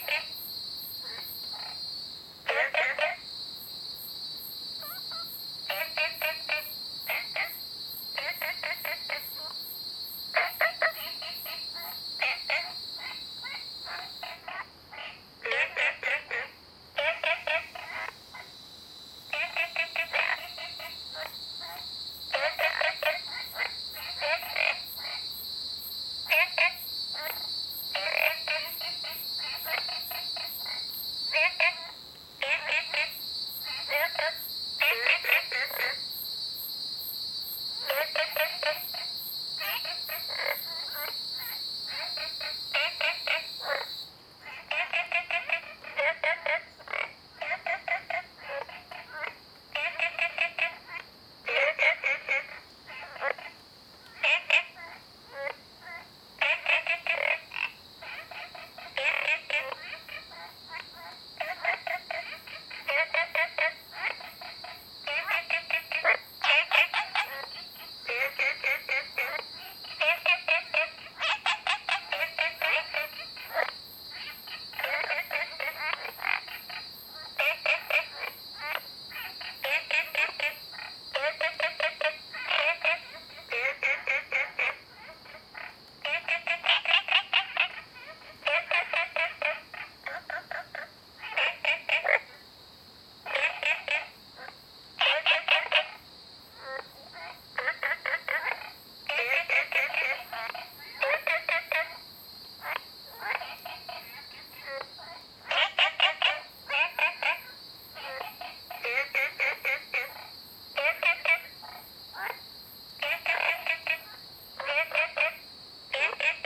綠屋民宿, 桃米里 Taiwan - Frogs chirping and Cicadas cry
Frogs chirping, Cicadas cry, Ecological pool
Zoom H2n MS+XY